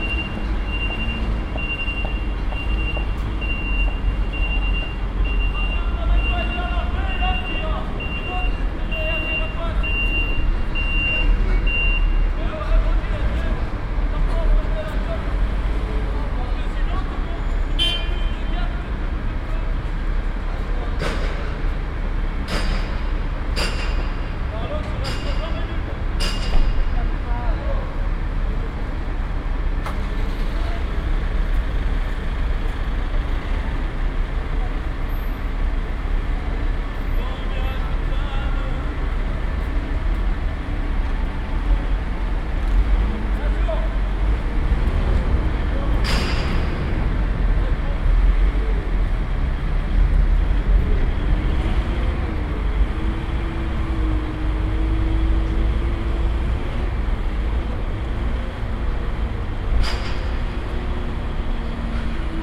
Brussels, Mont des Arts, the chimes / Le Carillon
Brussels, Mont des Arts, the chimes.
Bruxlles, le carillon du Mont des Arts.
Brussels, Belgium, 24 July 2008, 09:31